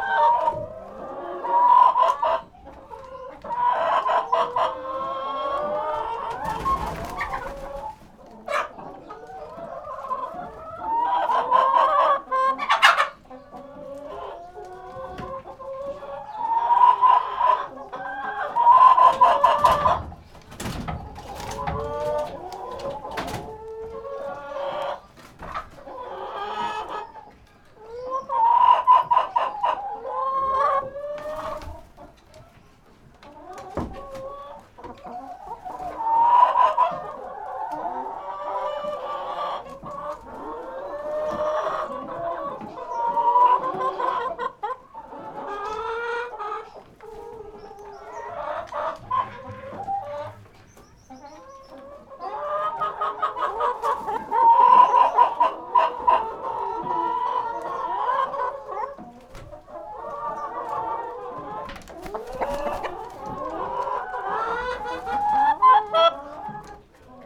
Hintermeilingen, Waldbrunn (Westerwald), Deutschland - hen house
hen house sounds, they will be broilers soon...
(Sony PCM D50)